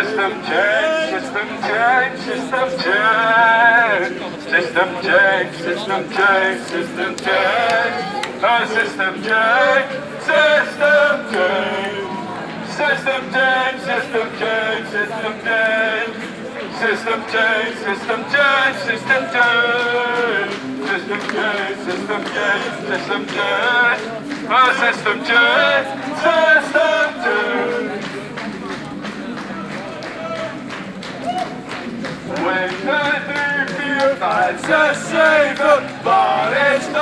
15O - Occupy Den Haag, system change